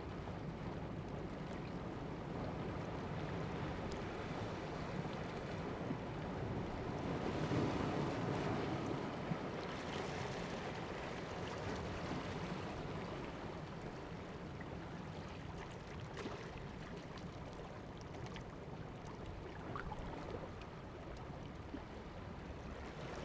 {
  "title": "Jizatay, Ponso no Tao - Small pier",
  "date": "2014-10-30 09:52:00",
  "description": "Small pier, Sound of the waves\nZoom H2n MS +XY",
  "latitude": "22.03",
  "longitude": "121.54",
  "altitude": "6",
  "timezone": "Asia/Taipei"
}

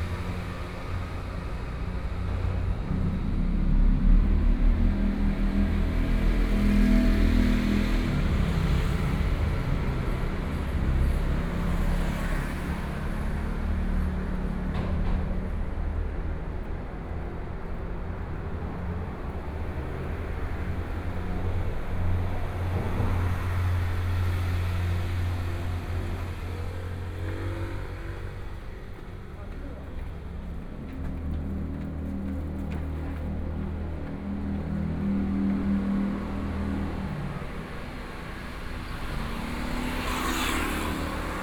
{
  "title": "Jianzhong St., Miaoli City - the underpass",
  "date": "2013-10-08 09:37:00",
  "description": "Walking through the underpass, Traffic Noise, Zoom H4n+ Soundman OKM II",
  "latitude": "24.57",
  "longitude": "120.82",
  "altitude": "45",
  "timezone": "Asia/Taipei"
}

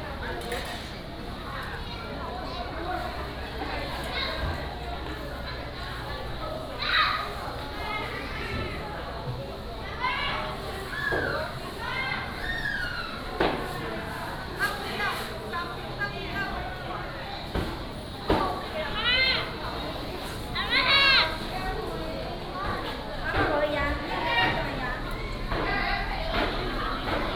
{
  "title": "Benyu Rd., Liuqiu Township - Walking through the market",
  "date": "2014-11-02 09:19:00",
  "description": "Walking through the market",
  "latitude": "22.35",
  "longitude": "120.38",
  "altitude": "13",
  "timezone": "Asia/Taipei"
}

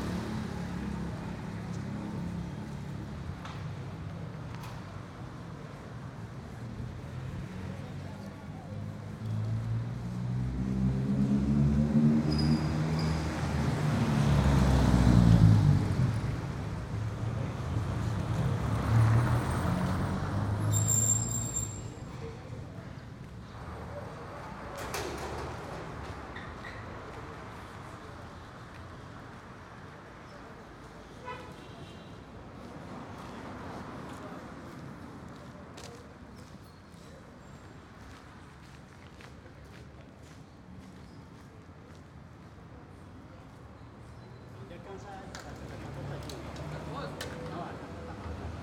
{
  "title": "Ibagué, Ibagué, Tolima, Colombia - Ibagué deriva sonora02",
  "date": "2014-11-09 10:44:00",
  "description": "Ejercicio de deriva sonora por el centro de Ibagué.\nPunto de partida: Concha Acústica\nSoundwalk excercise throughout Ibagué's dowtown.\nEquipment:\nZoom h2n stereo mics Primo 172.\nTechnique: XY",
  "latitude": "4.45",
  "longitude": "-75.24",
  "altitude": "1285",
  "timezone": "America/Bogota"
}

